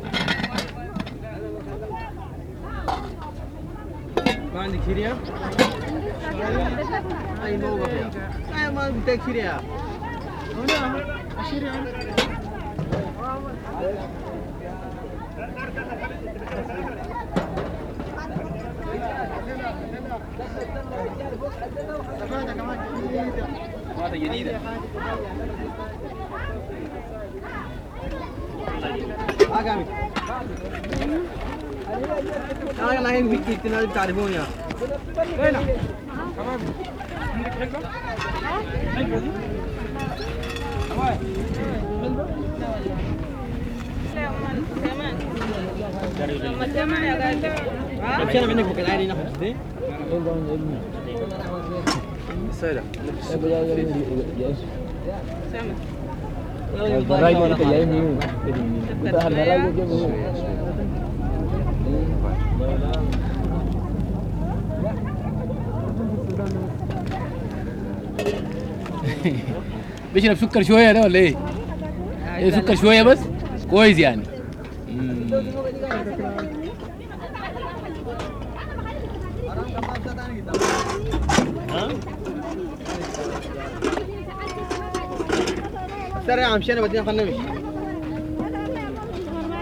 Drinking tea in ed-Damazin. Almost everywhere you can drink tea on the streets of Sudan. Black tea or, like here, kirkede (hibiscus), hot or cold. Healthy and delicious.